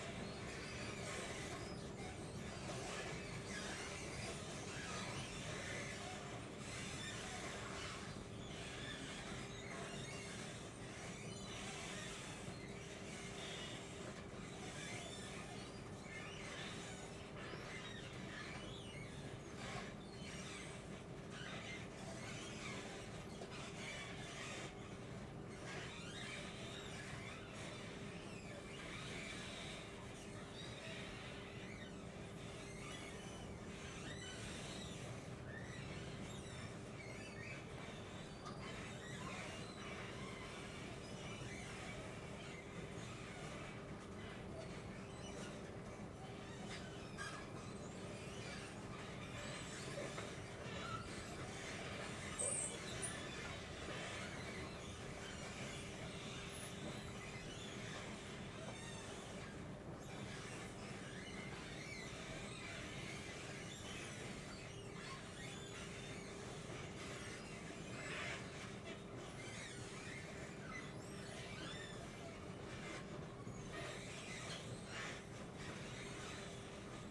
{"title": "Saint-Josse-ten-Noode, Belgium - Free Jazz escalators", "date": "2013-06-19 18:40:00", "description": "This is one of several amazing escalators leading down from the main station to the trams and Metro. Recorded with Naiant X-X microphones and FOSTEX FR-2LE.", "latitude": "50.86", "longitude": "4.36", "altitude": "32", "timezone": "Europe/Brussels"}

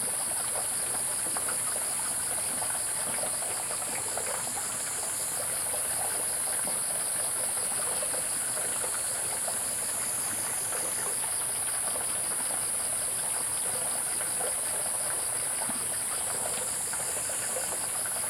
{"title": "TaoMi Li., 青蛙阿婆的家 Puli Township - Sound of insects", "date": "2015-08-11 05:38:00", "description": "Bird calls, Crowing sounds, The sound of water streams, Sound of insects\nZoom H2n MS+XY", "latitude": "23.94", "longitude": "120.94", "altitude": "470", "timezone": "Asia/Taipei"}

